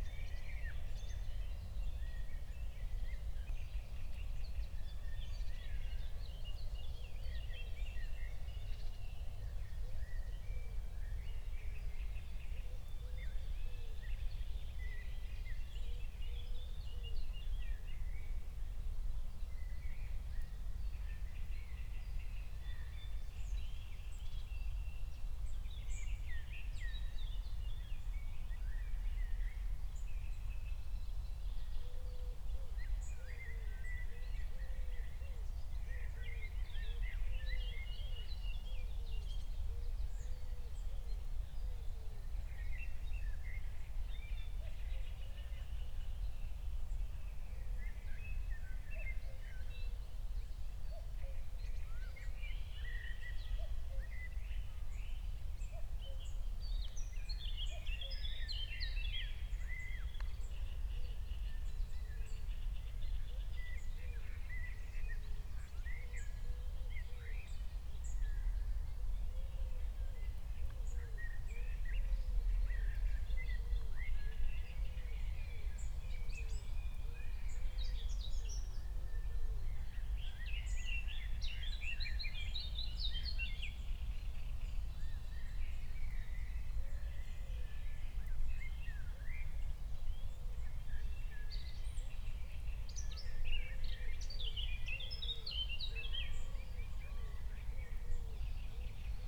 Berlin, Buch, Mittelbruch / Torfstich - wetland, nature reserve
05:00 Berlin, Buch, Mittelbruch / Torfstich 1